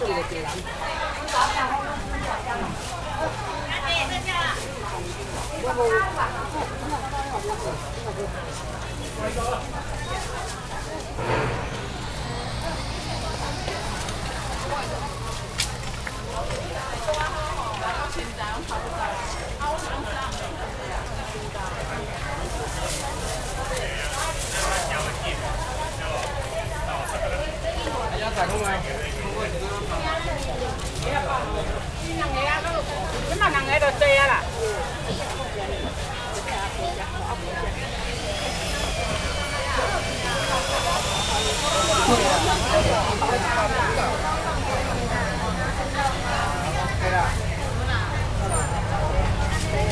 Shilin, Taipei - Traditional markets
walking in the Traditional markets, Rode NT4+Zoom H4n